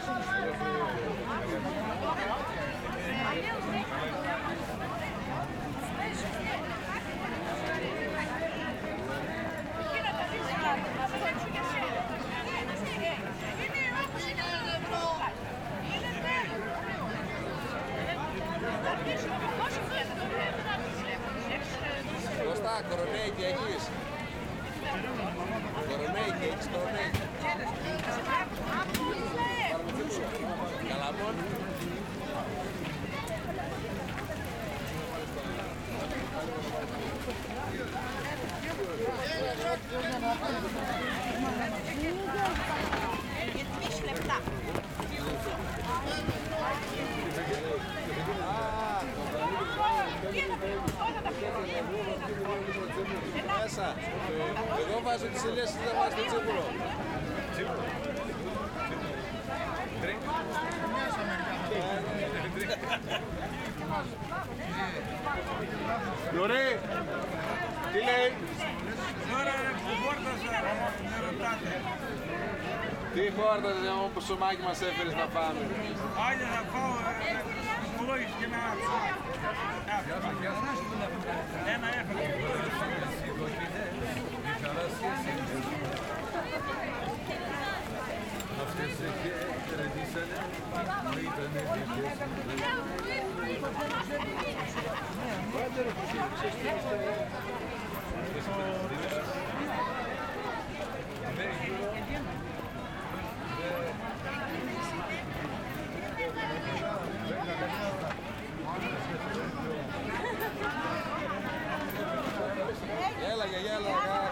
crowded bazaar ambience recorded in Xanthi, Greece on a Saturday morning. The bazaar takes place in the centre of the city each Saturday and it is renowned for its oriental character and the diversity of merchandise on display filled with colours, sounds and life.